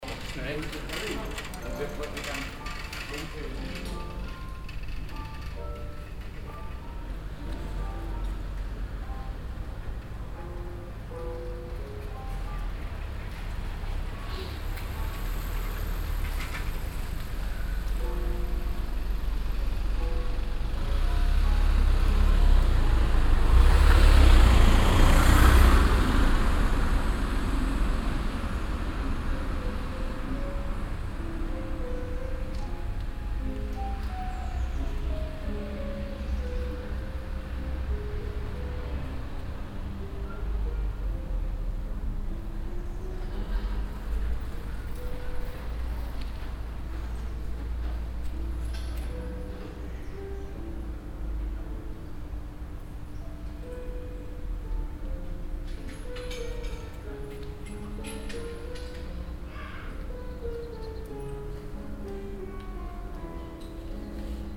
amsterdam, hartenstraat, piano play
listening to piano play that comes out of an open window of a nearby house
international city scapes - social ambiences and topographic field recordings
6 July 2010, 17:02